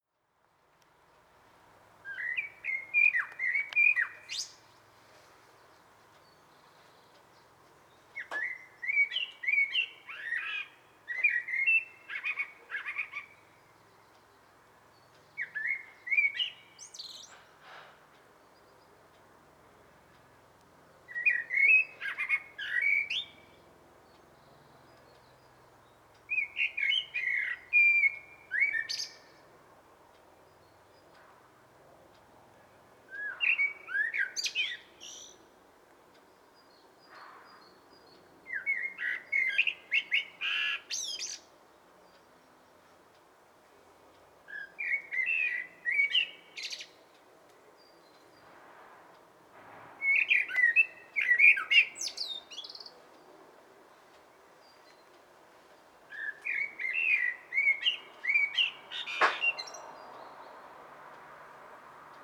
Friedrichsdorf, Deutschland - Solo Song Bird
A single song bird tweets in the afternoon sun. Recordng is edited with a highpass at 900hz and 12db/okt